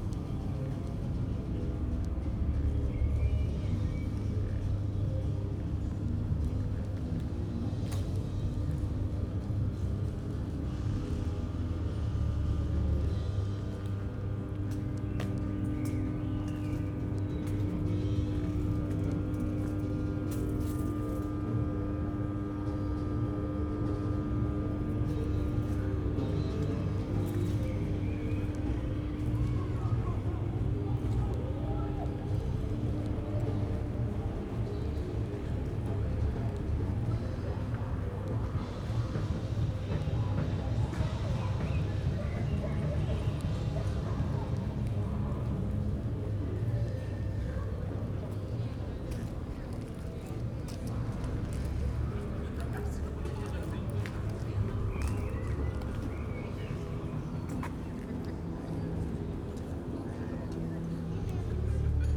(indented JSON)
{
  "title": "Tallinn Telliskivi",
  "date": "2011-07-06 20:55:00",
  "description": "walk through former industrial area, now more and more occupied by artists, musicians, workshops.",
  "latitude": "59.44",
  "longitude": "24.73",
  "altitude": "18",
  "timezone": "Europe/Tallinn"
}